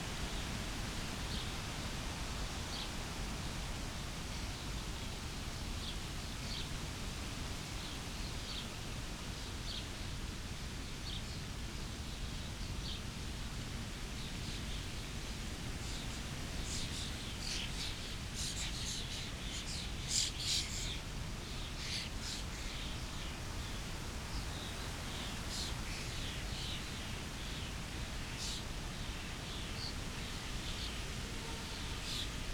Tempelhofer Feld, Berlin - fresh wind in a birch tree
a beautiful summer evening on Tempelhofer Feld, ancient airport area, under a birch tree, a strong wind is blowing from direction west, tried to protect he mics as good as possible, in order to get a bit of that wind recorded.
(Sony PCM D50, Primo Em172)
Deutschland